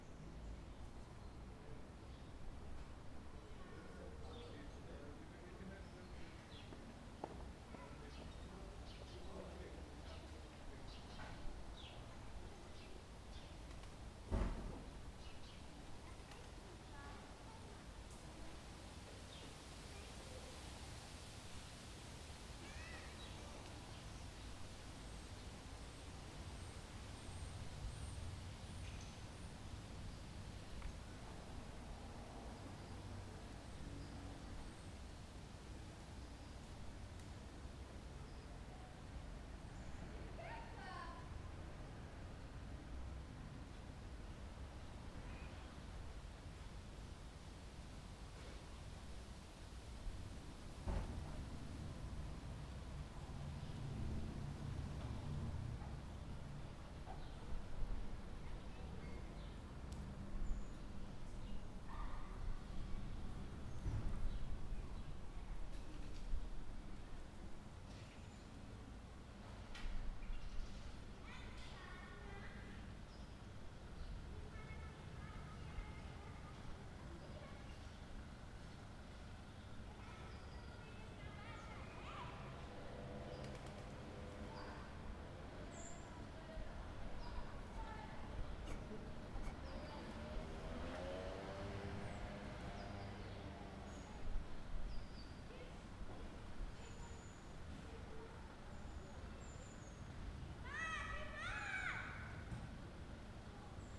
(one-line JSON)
{"title": "Smaragdplein, Amsterdam, Nederland - Populier/ Poplar", "date": "2013-10-18 14:00:00", "description": "(description in English below)\nDe populieren op dit plein maken een ritselend geluid. Voor sommige mensen is dit een rustgevend geluid in de hectische stad, een plek waar je naar de natuur kunt luisteren en tot bezinning kunt komen.\nThe poplars on this square make a rustling sound. For some people this is a soothing sound in the hectic city, a place where you can listen to nature and one can awaken the senses.", "latitude": "52.35", "longitude": "4.90", "altitude": "4", "timezone": "Europe/Amsterdam"}